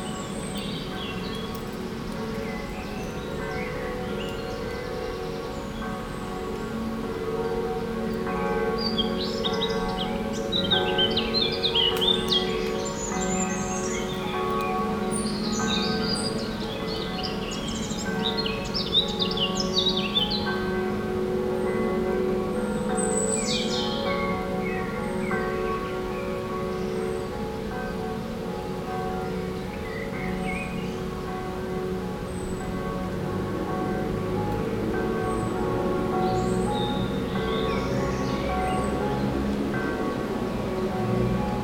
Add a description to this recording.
Into the woods, birds singing, distant noise from the La Hulpe bells and a lot of traffic drones.